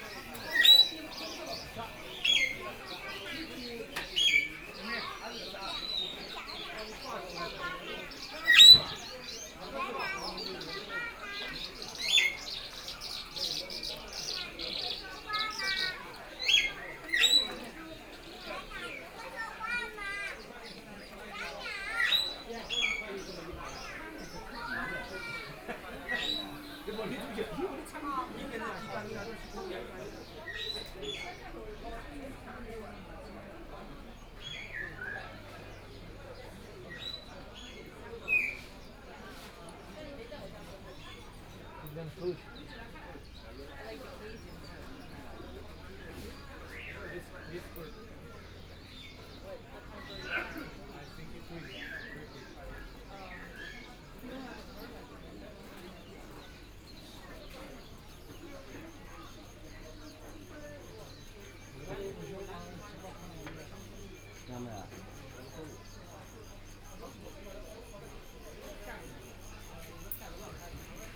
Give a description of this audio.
Sound of crickets, Antique Market, Pet and bird market, Binaural recording, Zoom H6+ Soundman OKM II